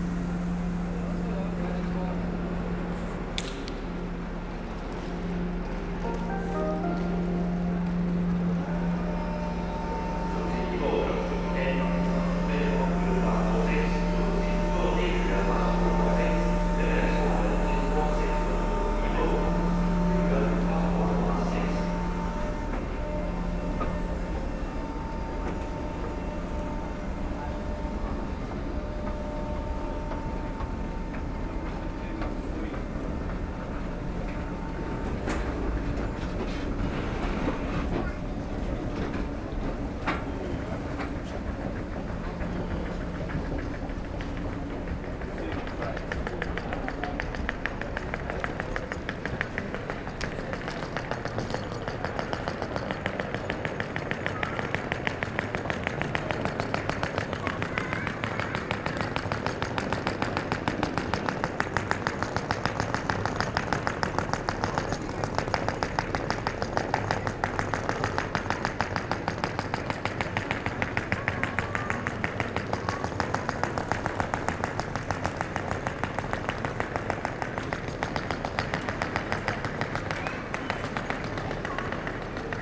København, Denmark - Copenhagen station
Walking into the main Copenhagen station. Some trains are leaving. The station is globally quiet as a large part of commuters use bike into the city.